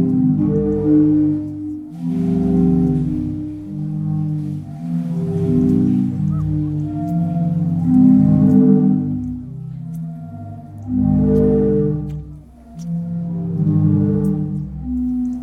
Zadar, Sea Organ - Sea Organ